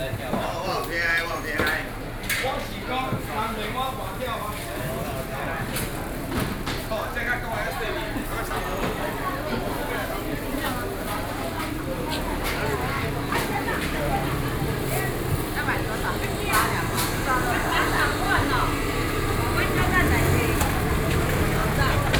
Zhongzheng Rd., Xizhi Dist. - Traditional markets
4 November, ~08:00